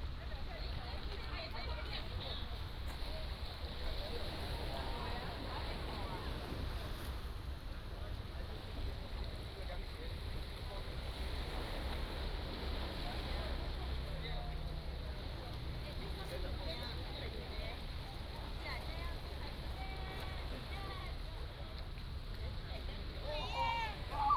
花瓶岩, Hsiao Liouciou Island - In Sightseeing
In Sightseeing, Sound of the waves, Tourists are dabble